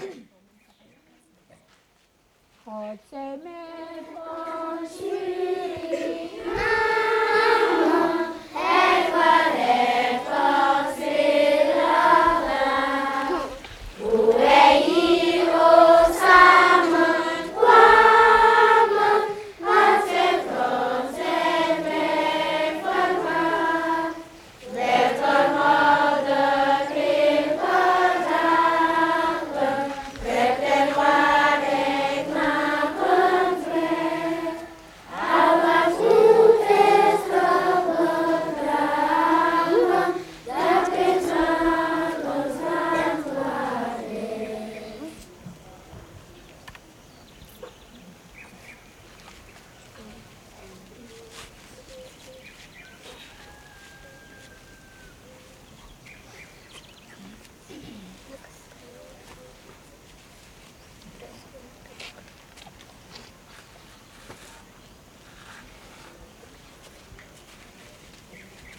Boven-Suriname, Suriname - school children sing national anthem in the morning before class in Pokigron
school children sing national anthem in the morning before class in Pokigron